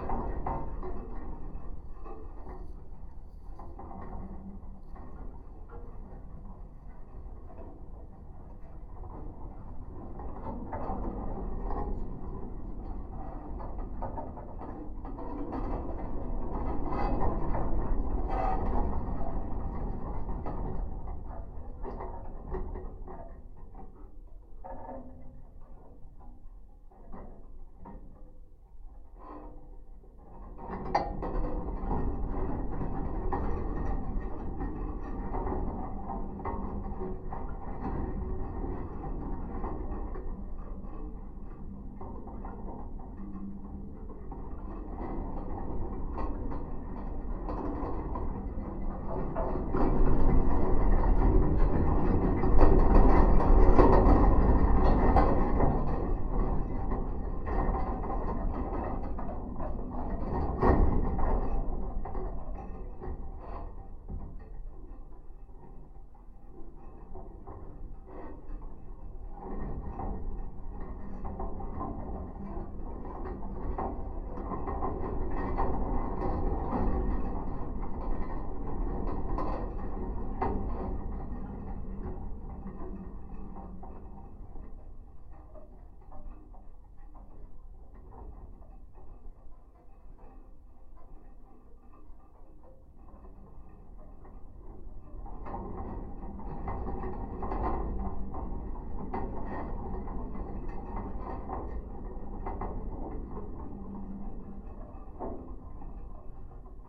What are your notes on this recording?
a piece of rusty barbed wire, probably from the soviet times. contact microphones